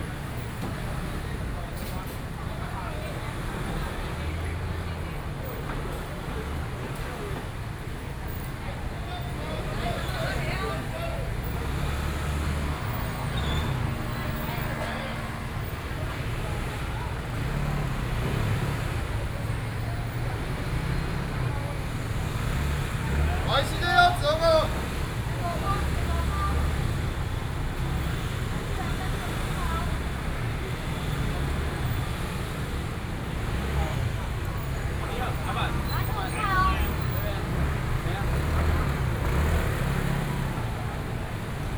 Zhonghua St., Luzhou Dist. - Traditional Market
walking in the Traditional Market, Binaural recordings, Sony PCM D50 + Soundman OKM II